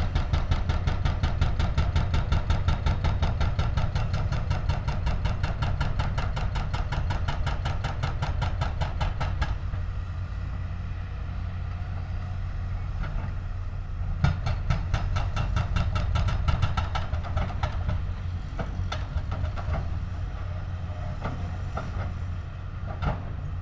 demolition of a logistics company, excavator with mounted jackhammer demolishes building elements
april 29, 2014